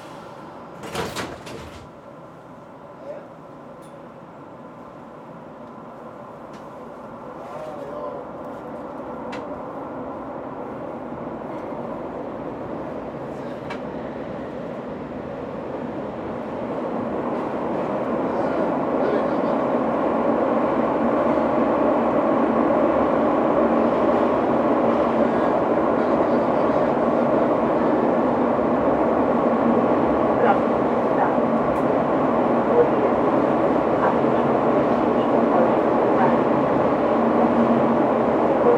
São Sebastião, Lisboa, Portugal - The Red Line (Lisbon Metro)
The Red Line (Lisbon Metro), from Airport to Sao Sebastião.